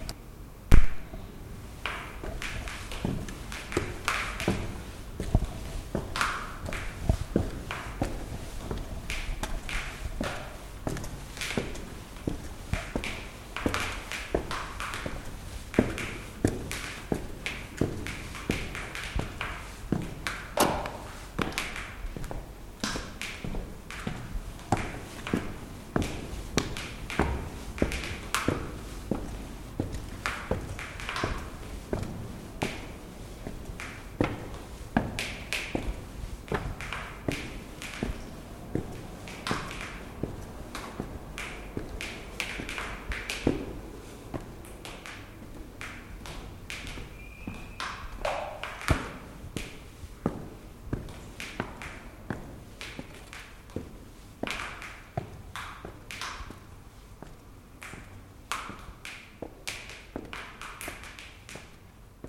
Edison power station
nterieur of the Edison Transformer Station in Jeruzalemská street, before the reconstruction. The building was designed by E.A. Libra in 1926 and was in function till 90 ies.